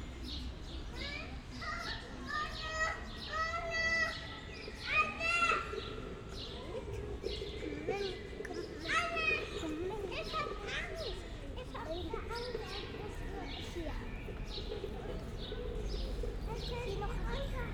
Schinkestr., Neukölln, Berlin - playground, sunday afternoon
playground between Schinkestr. and Maybachufer, within a quite typical berlin backyard (Hinterhof) landscape, warm spring sunday afternoon.
(tech: SD702 Audio technica BP4025)
Berlin, Germany, 29 April 2012